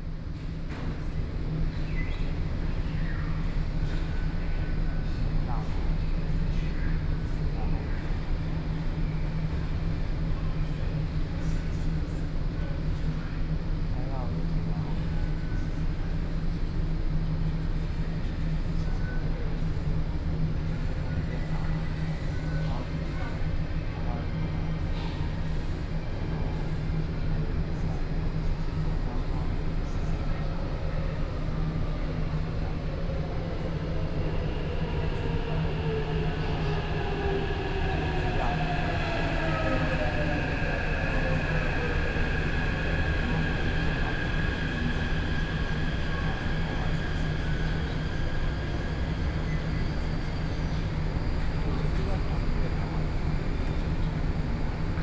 Dingxi Station, New Taipei City - Platform
in the Platform, Sony PCM D50 + Soundman OKM II